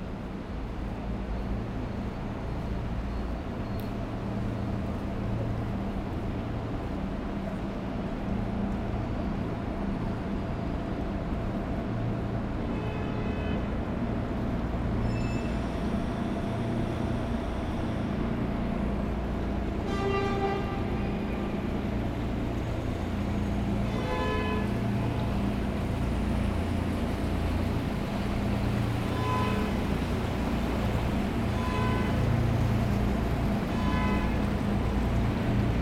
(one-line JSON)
{"title": "Namur, Belgique - Boat on the Sambre river", "date": "2018-11-23 18:50:00", "description": "The container ship called Alain (IMO 226001470) is passing on the Sambre river.", "latitude": "50.46", "longitude": "4.87", "altitude": "77", "timezone": "GMT+1"}